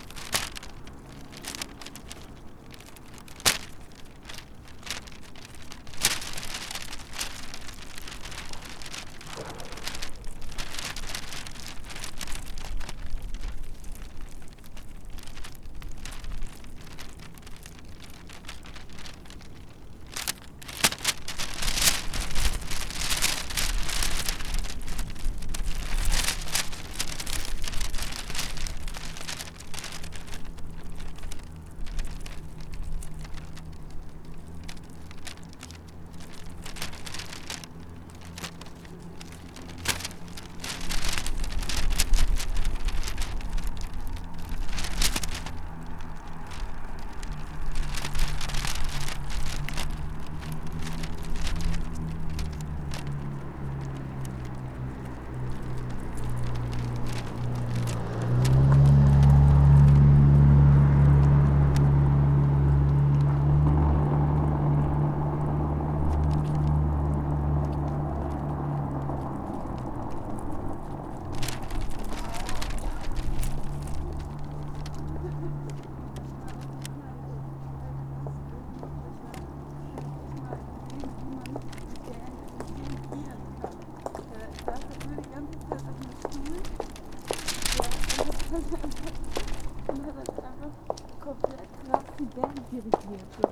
bills on a fence fluttering in the wind, young couple passes by
the city, the country & me: february 2, 2012